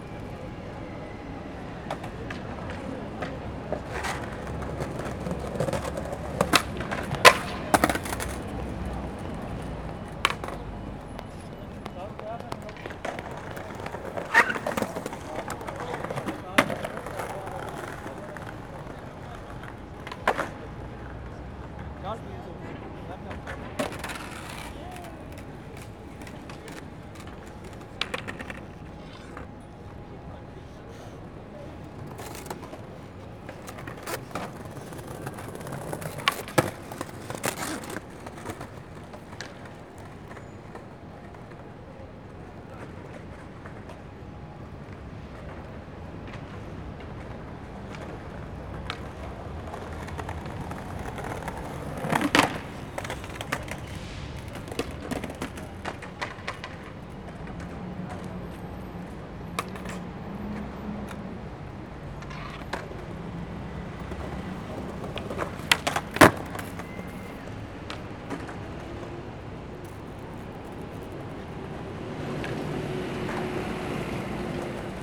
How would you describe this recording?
A group of young skaters try new tricks. Zoom H4n